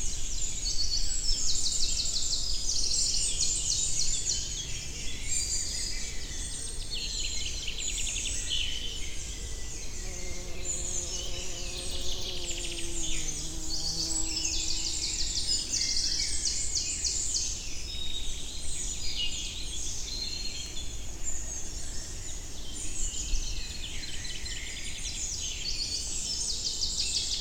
Montigny-le-Tilleul, Belgique - Birds in the forest
Lot of juvenile Great Tit, anxious Great Spotted Woodpecker (tip... tip... tip...), Common Chaffinch.